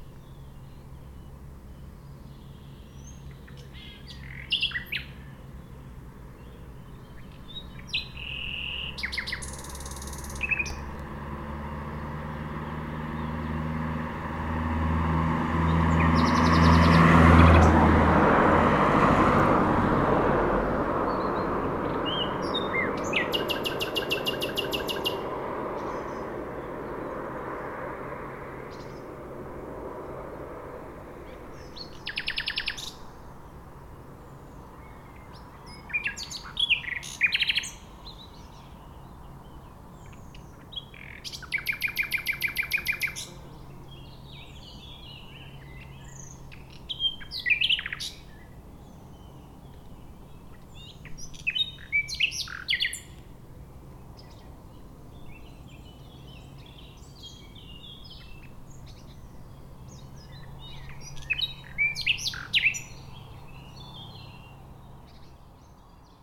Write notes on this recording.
Un rossignol chante dans un buisson entre la voie ferrée et les bords du lac du Bourget nullement effrayé par les passages de trains. Circulation des véhicules, sur la RD991 quelques instants laissés à l'expression naturelle.